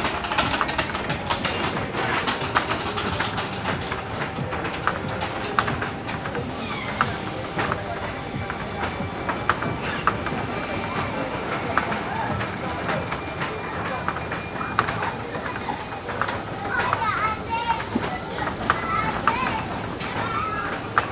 Place Victor Hugo, Saint-Denis, France - Roundabout Outside La Basilique de St Denis
This recording is one of a series of recording, mapping the changing soundscape around St Denis (Recorded with the on-board microphones of a Tascam DR-40).